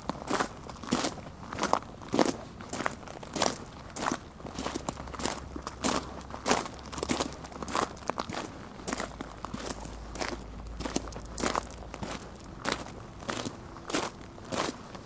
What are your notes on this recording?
- Una passeggiata su un vialetto di ghiaia - 1,15